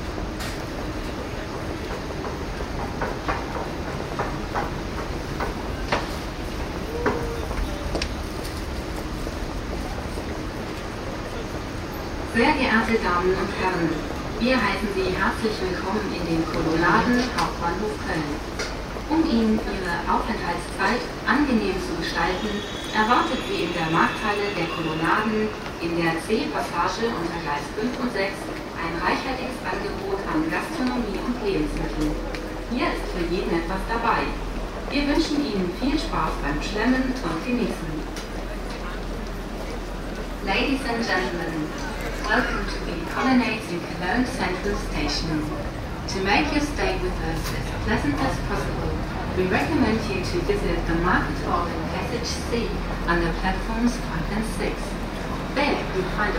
cologne, main station, international announcements - cologne, hauptbahnhof, internationale durchsagen
international announcements at cologne main station
soundmap nrw - topographic field recordings - social ambiences
25 April 2008